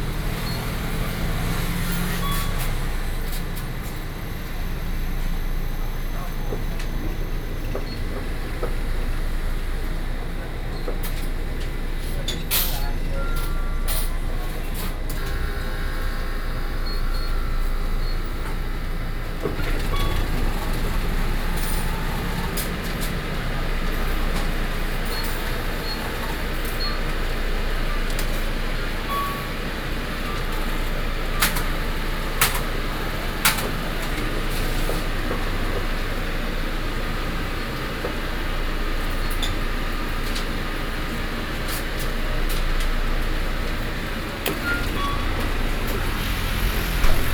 {"title": "Guandu, Beitou District, Taipei City - Use cash machines in stores", "date": "2012-07-03 21:11:00", "latitude": "25.13", "longitude": "121.47", "altitude": "11", "timezone": "Asia/Taipei"}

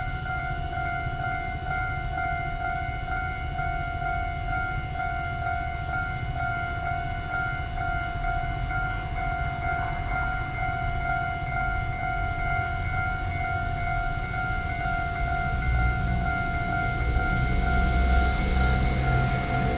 {"title": "Jung Li City, Taoyuan - Level crossing", "date": "2012-06-11 20:17:00", "description": "Level crossing, Train traveling through, Sony PCM D50 + Soundman OKM II", "latitude": "24.97", "longitude": "121.26", "altitude": "124", "timezone": "Asia/Taipei"}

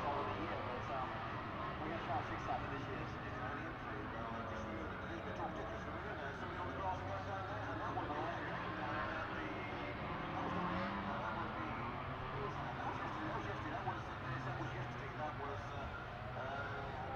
{"title": "Unnamed Road, Derby, UK - British Motorcycle Grand Prix 2004 ... qualifying ...", "date": "2004-07-24 13:50:00", "description": "British Motorcycle Grand Prix 2004 ... qualifying part one ... one point mic to minidisk ...", "latitude": "52.83", "longitude": "-1.37", "altitude": "74", "timezone": "Europe/London"}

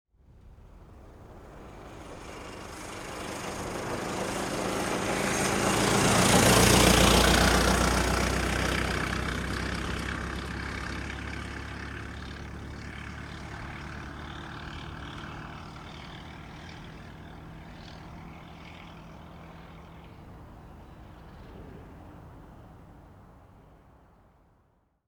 {"title": "Hardy Station - 1930 Ford Model A, By (Neumann 190i, Sound Devices 722)", "latitude": "36.31", "longitude": "-91.48", "altitude": "109", "timezone": "Europe/Berlin"}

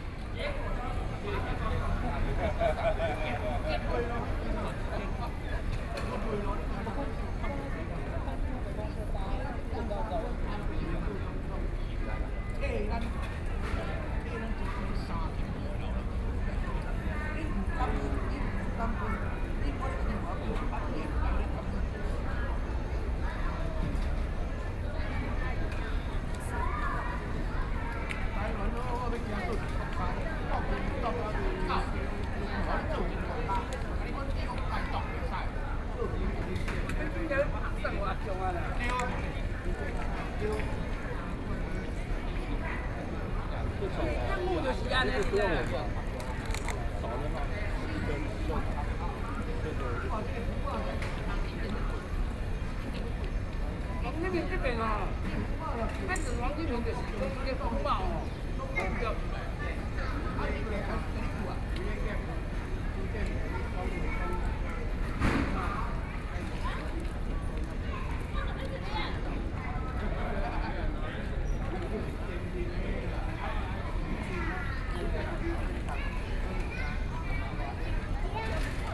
{"title": "National Theater, Taiwan - Chat", "date": "2013-10-10 13:31:00", "description": "Processions and assemblies of people gathered together to break chat, Binaural recordings, Sony PCM D50 + Soundman OKM II", "latitude": "25.04", "longitude": "121.52", "altitude": "8", "timezone": "Asia/Taipei"}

